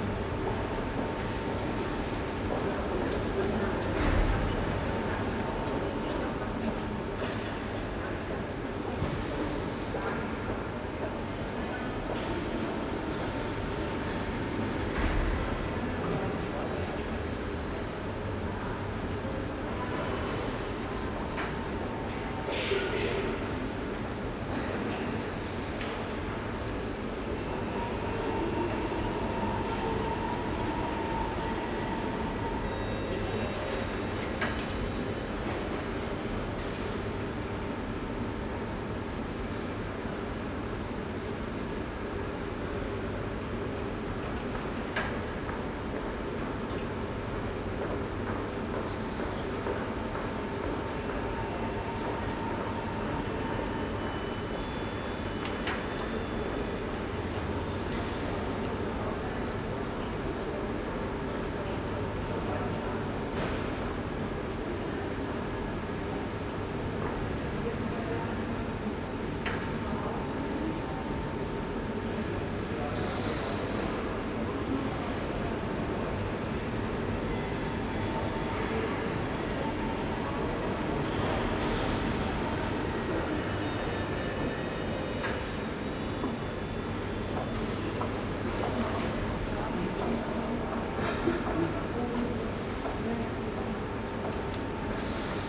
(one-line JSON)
{"title": "Stadt-Mitte, Düsseldorf, Deutschland - Düsseldorf, Stilwerk, second floor", "date": "2012-11-06 17:30:00", "description": "Inside the Stilwerk building on the second floor of the gallery. The sound of people talking and moving and the bell and the motor of the elevators in the open modern architecture.\nThis recording is part of the exhibition project - sonic states\nsoundmap nrw - topographic field recordings, social ambiences and art places", "latitude": "51.22", "longitude": "6.78", "altitude": "47", "timezone": "Europe/Berlin"}